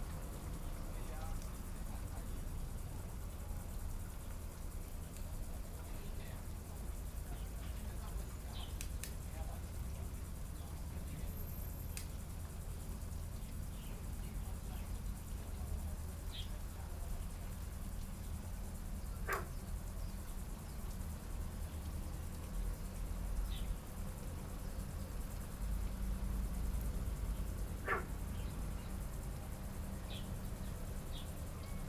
{"title": "內埤灣, Su'ao Township - At the seaside", "date": "2014-07-28 15:02:00", "description": "Birdsong, At the seaside, Frogs sound\nZoom H6 MS+ Rode NT4", "latitude": "24.58", "longitude": "121.87", "altitude": "9", "timezone": "Asia/Taipei"}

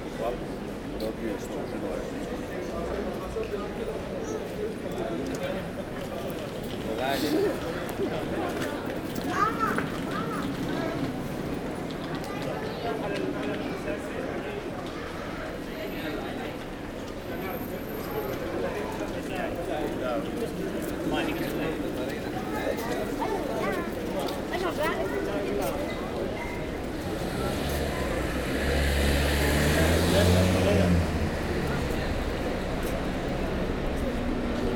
{"title": "Brussels, Manneken Pis - Manneken-Pis", "date": "2018-08-25 13:00:00", "description": "Brussels, the very famous Manneken-Pis, a statue of a baby pissing. You must be Belgian to understand, perhaps ! The same sound as everybody ? Yes probably, the place is invariable !", "latitude": "50.85", "longitude": "4.35", "altitude": "31", "timezone": "GMT+1"}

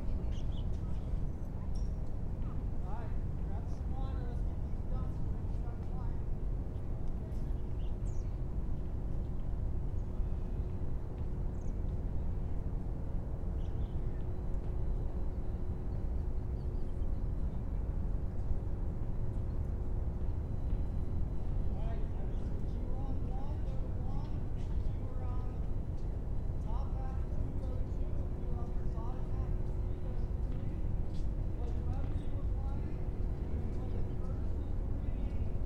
{"title": "Manning Rd SW, Marietta, GA 30060 Marietta, GA, USA - Laurel Park - Tennis Lesson", "date": "2021-02-17 16:52:00", "description": "A lesson in one of the tennis courts of Laurel Park. The recording was taken from a distance on a bench. Other sounds not related to the lesson can be heard from the surrounding area, such as from traffic, birds, and other sources that are more difficult to identify.\n[Tascam Dr-100mkiii & Primo EM272 omni mics)", "latitude": "33.95", "longitude": "-84.57", "altitude": "319", "timezone": "America/New_York"}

